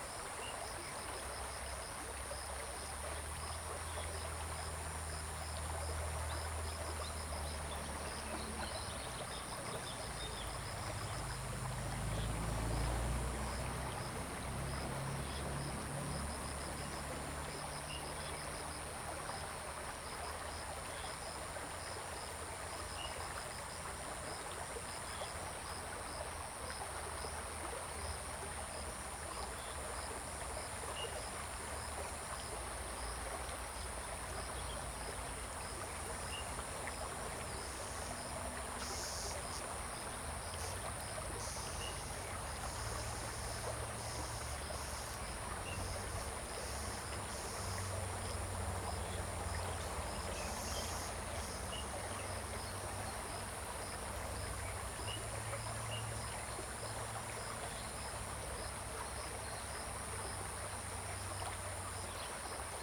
TaoMi River, 桃米里 埔里鎮 - Stream and Birds
Stream and Birds, Bird calls
Zoom H2n MS+XY